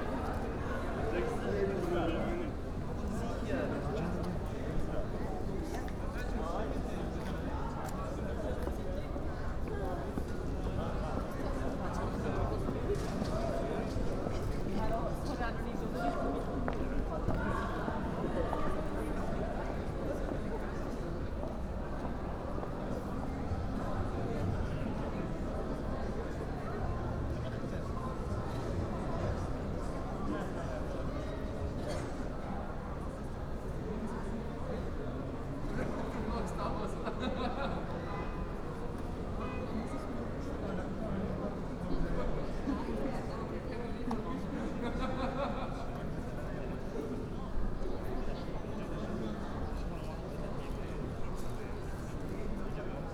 Linz, Österreich - altstadt
Altstadt nachts, Linz